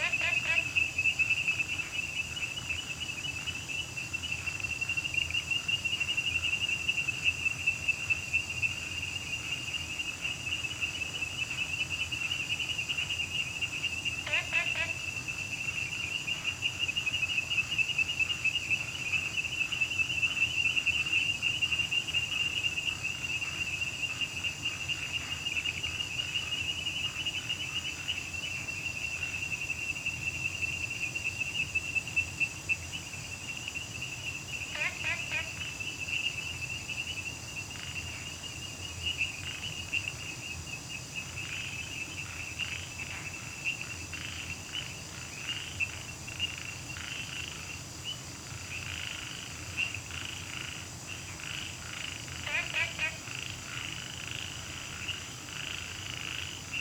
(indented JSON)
{
  "title": "茅埔坑溪生態公園, Nantou County - Frogs chirping",
  "date": "2015-08-10 23:26:00",
  "description": "Frogs chirping, Insects sounds, Wetland\nZoom H2n MS+ XY",
  "latitude": "23.94",
  "longitude": "120.94",
  "altitude": "470",
  "timezone": "Asia/Taipei"
}